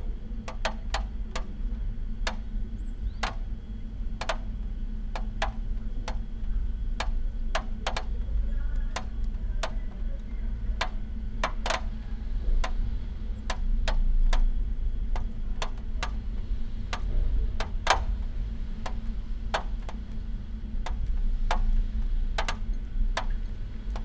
from/behind window, Mladinska, Maribor, Slovenia - drops, creaky doors
light snow, drops, creaky doors